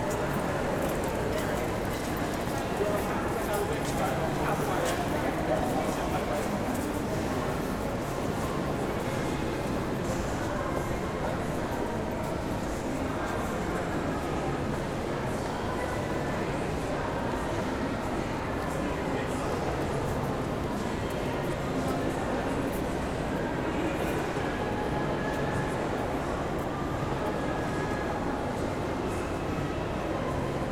berlin, grunerstraße: einkaufszentrum - the city, the country & me: shopping centre
entrance hall of the alexa shopping centre
the city, the country & me: march 14, 2011